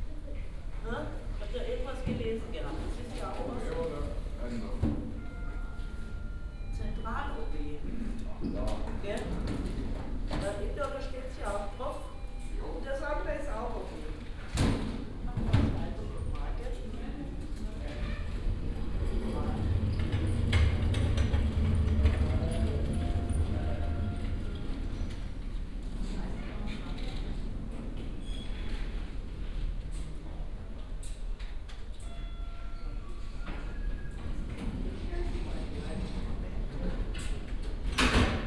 Weilburg hospital entrance area ambience
(Sony PCM D50, OKMII)
Weilburg, Germany